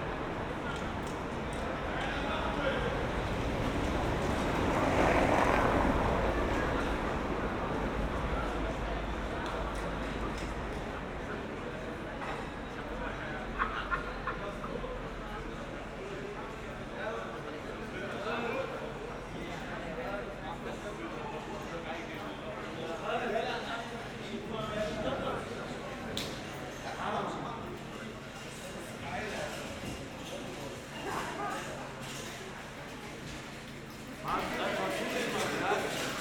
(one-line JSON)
{"title": "berlin: friedelstraße - the city, the country & me: night traffic", "date": "2012-07-07", "description": "cyclists, passers by, taxis\nthe city, the country & me: july 7, 2012", "latitude": "52.49", "longitude": "13.43", "altitude": "46", "timezone": "Europe/Berlin"}